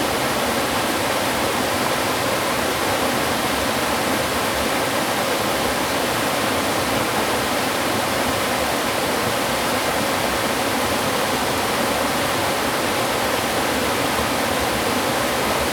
五峰旗瀑布, 礁溪鄉Yilan County - waterfalls and rivers
Waterfalls and rivers
Zoom H2n MS+ XY